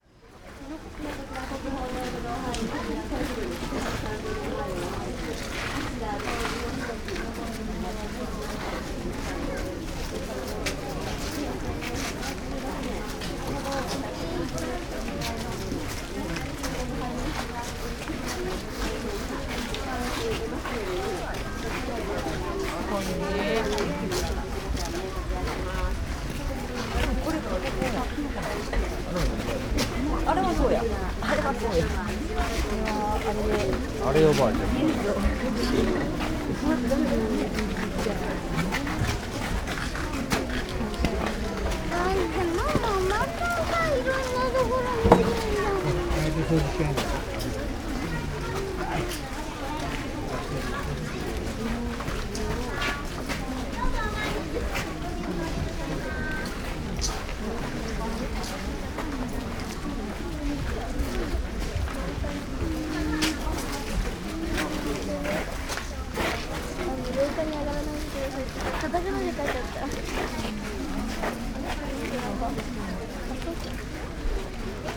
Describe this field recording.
gardens sonority, after the rain, murmur of people